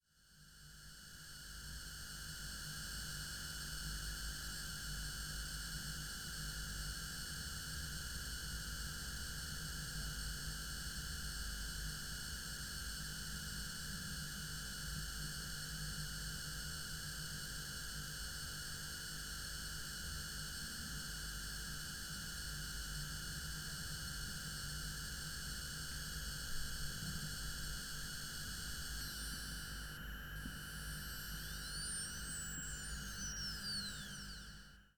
sound of two laptop power supplies (recorded with two superlux cardioid microphones, each touching the unit, gain cranked up.